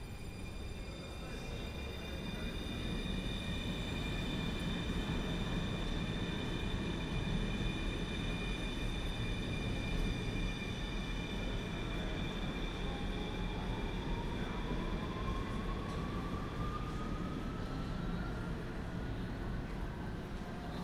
Arrivals and departures of tramcars in the Tramtunnel.
Recorded as part of The Hague Sound City for State-X/Newforms 2010.
The Hague, The Netherlands, 19 November 2010